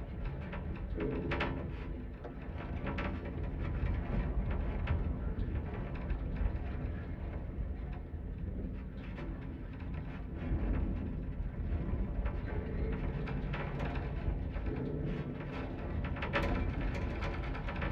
This recording uses two contact microphones to pick up the vibrations made by the wind passing over a metal wire fence. The weather wasn't overly windy, but enough to have an effect on the object. I used two Jrf contact microphones a Sound Devices Mixpre-D and a Tascam DR-100 to make my recording.
Cornwall, UK, 10 February 2016